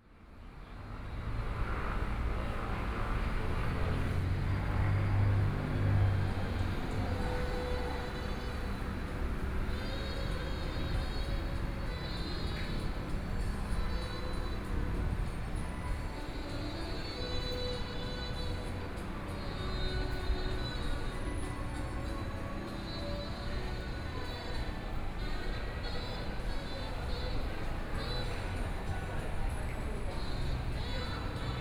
in the Tourist shopping, Many tourists
Sony PCM D50+ Soundman OKM II